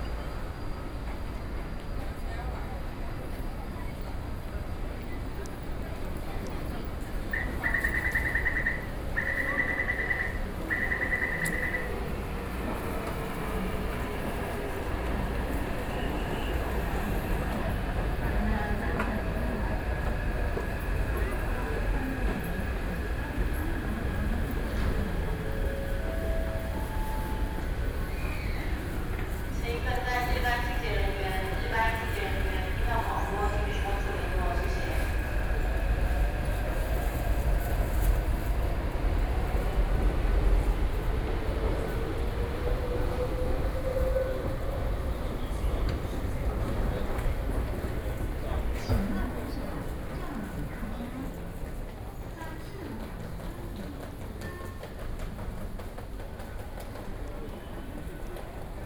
Minquan West Road Station, Taipei City - soundwalk
walking in the Minquan West Road Station, Sony PCM D50 + Soundman OKM II